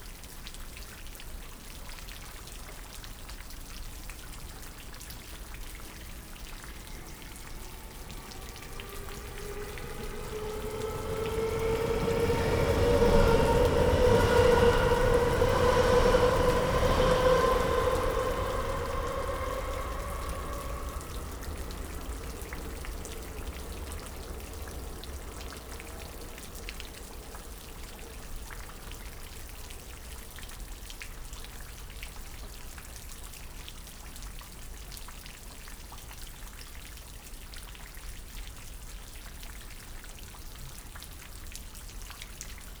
Haren is an old village on the border of Brussels, which has undergone huge changes in recent decades. A beautiful 16th century farmhouse can still be seen, there are fields and houses with large gardens. Once it was famous as a chicory growing area. Now it is surrounded by railways, motorways and the international airport. Controversy rages over the building of a new prison here. On this day it is raining again, as it has for the last 3 weeks.
9 February 2016, ~11:00